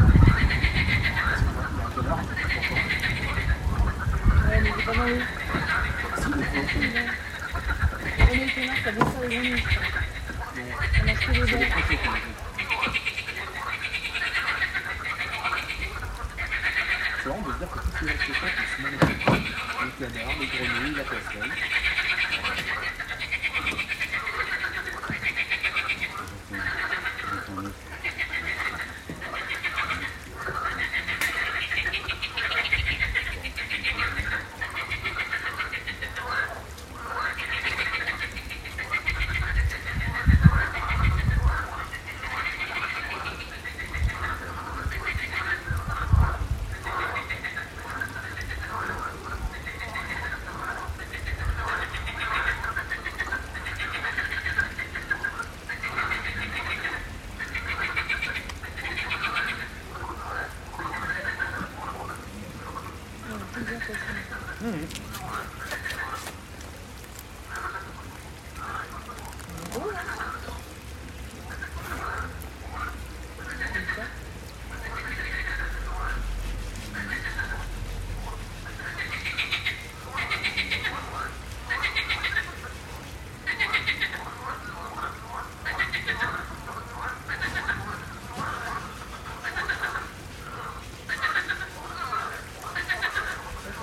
{"title": "Mnt de Verdun, Francheville, France - Frogs in Francheville", "date": "2022-04-30 16:15:00", "description": "The song of frogs in a pond. Presence of a few ducks and a handyman cutting a bicycle frame", "latitude": "45.74", "longitude": "4.77", "altitude": "198", "timezone": "Europe/Paris"}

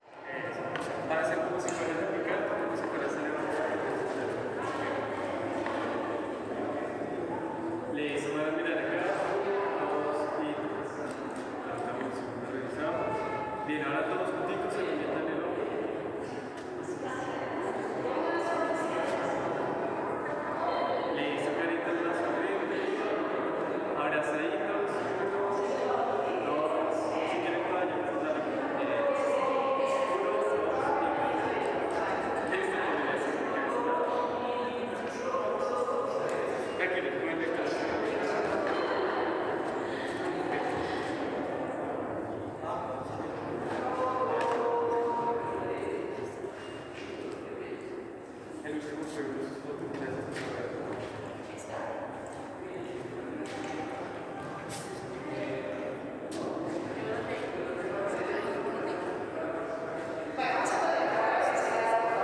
{"title": "Parque De La Sal, Zipaquirá, Cundinamarca, Colombia - Mine of the Salt Cathedral of Zipaquirá - Inside", "date": "2021-05-22 13:00:00", "description": "In this audio you will hear the inside of the mine of the Zipaquirá Salt Cathedral. You will be able to hear how tourist tures are performed inside the mine, the reverberation that sits inside the place and tourists taking photographs at an important point in the mine.", "latitude": "5.02", "longitude": "-74.01", "altitude": "2693", "timezone": "America/Bogota"}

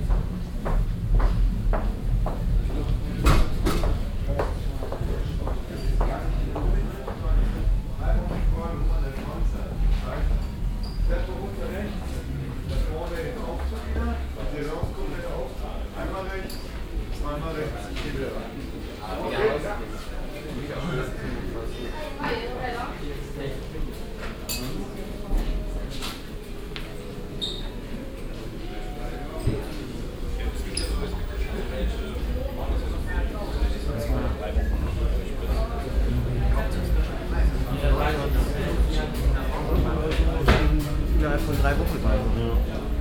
{"title": "cologne, grosse budengasse, music store - e-gitarren abt.", "date": "2008-07-08 16:44:00", "description": "soundmap nrw: social ambiences/ listen to the people - in & outdoor nearfield recordings, listen to the people", "latitude": "50.94", "longitude": "6.96", "altitude": "59", "timezone": "Europe/Berlin"}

